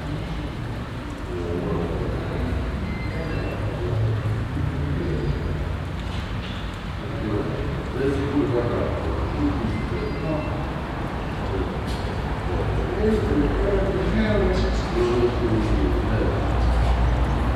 Altstadt, Düsseldorf, Deutschland - Düsseldorf, K20, Entry Hall
At the entry hall of the contemporary art museum K20. The sound of voices and steps reverbing in the open hallway with a small water pool.
This recording is part of the exhibition project - sonic states
soundmap nrw - topographic field recordings, social ambiences and art places